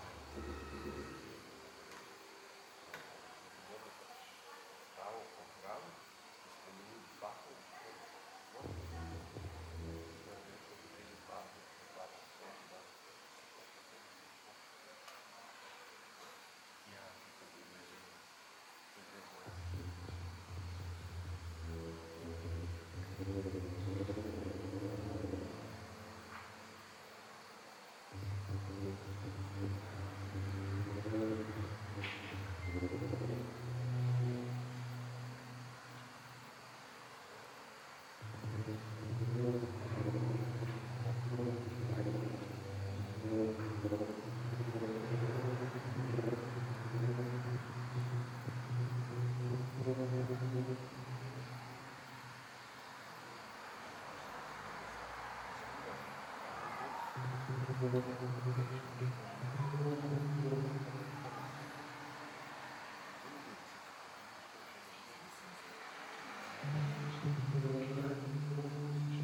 Kosovelova ulica, Nova Gorica, Slovenia - BIO AKUSTIČNI SIGNALI GORIŠKIH VRTNIC
Tisto sončno popoldne sem s prijateljico v centru Nove Gorice snemal sejo Bioloških Ritmov in Signalov Goriških Vrtnic. Ritmi in frekvence so se skozi čas spreminjale, kar nama je lepo dalo vedet v kakšnem vzdušju so takrat bile vrtnice. Posnetek v živo je dolg priblizno eno urco, uraden posnetek (brez zvokov okolice, zivali, ljudi in avtomobilov) bo naknadno tudi še objavljen v boljši studijski zvočni kvaliteti.
Več informacij o poteku snemanja in strukturah Bioloških Ritmov Vrtnic iz tistega popoldneva bodo še naknadno objavljene tudi na moji spletni strani..
Slovenija